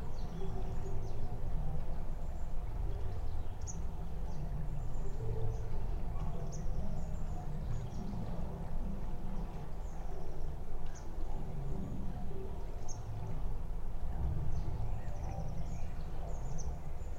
Ilciukai, Lithuania, the bridge
metallic/wooden bridge through river Sventoji. recorded with omni mics for soundcape and LOM geophone on bridge construction
Utenos apskritis, Lietuva, 5 April, 16:55